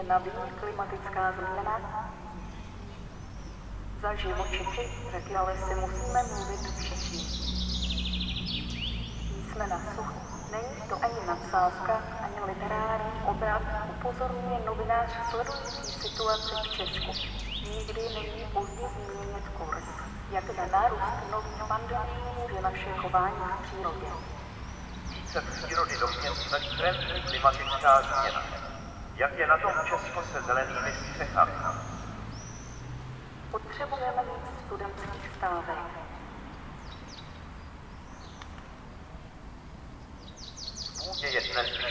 Pasteurova, Ústí nad Labem-město, Česko - Změnu klimatu popíráš i ty! / You, Too, Are in Denial of Climate Change!
Severozápad, Česká republika